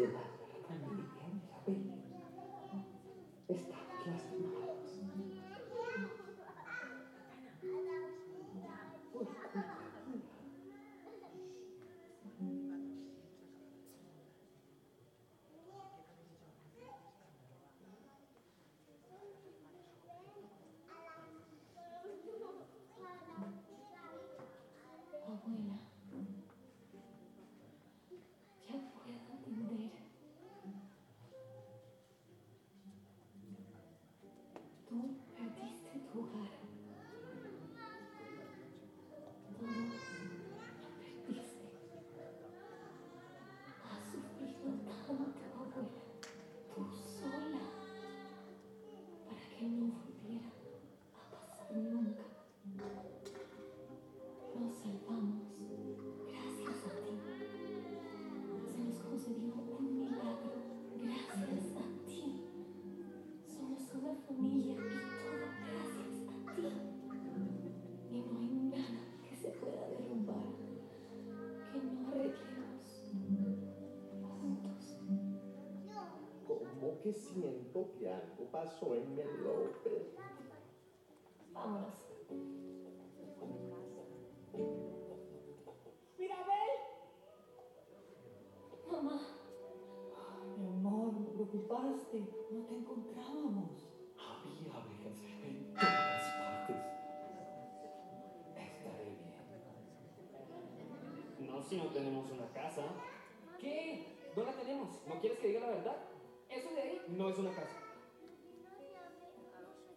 Bolulla - Province d'Alicante - Espagne
Cinéma en plein air
Ambiance 2
ZOOM F3 + AKG 451B
Comunitat Valenciana, España